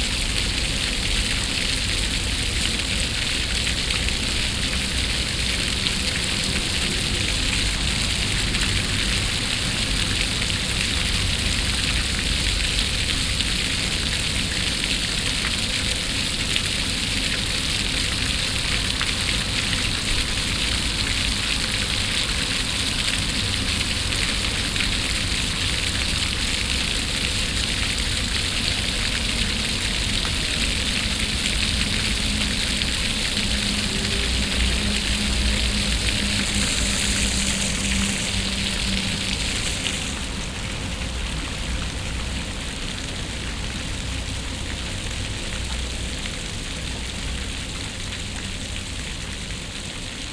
{"title": "Sachsenhausen-Nord, Frankfurt am Main, Deutschland - Frankfurt, Metzler Park, fountain", "date": "2013-07-25 13:45:00", "description": "At a fountain inside the Metzler parc. The sound of the water fountains on a sunny summer day.\nsoundmap d - social ambiences and topographic field recordings", "latitude": "50.11", "longitude": "8.68", "altitude": "100", "timezone": "Europe/Berlin"}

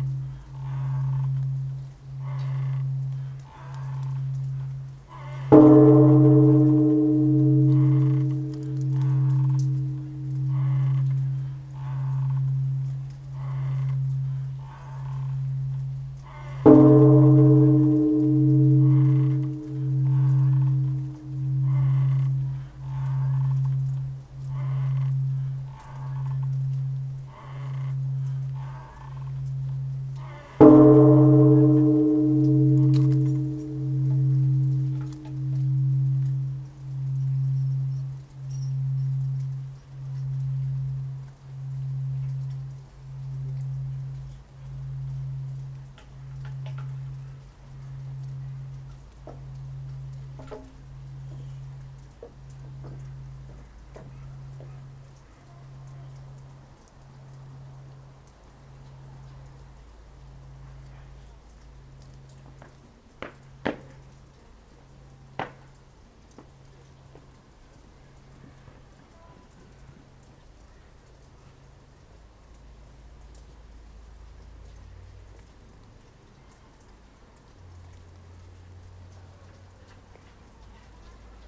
{"title": "Zenkō-ji Temple Bell, Motoyoshichō Nagano, Nagano-shi, Nagano-ken, Japan - Zenkō-ji Temple Bell", "date": "2017-02-13 13:00:00", "description": "This is a recording made of the special bell at the Zenkō-ji Temple in Nagano being struck to signal the hour. The bell hangs in a special tower, and there is a long beam that a special bell-ringer unties and then gently drives into the side of the bell, producing the sound. You can hear the leather strapping in which the beam is secured, the footsteps of the bell-ringer, and the melting snow all around; it was a bright, crisp day and lovely to sit in the sunshine and listen to the thaw and to this wonderful bell.", "latitude": "36.66", "longitude": "138.19", "altitude": "407", "timezone": "Asia/Tokyo"}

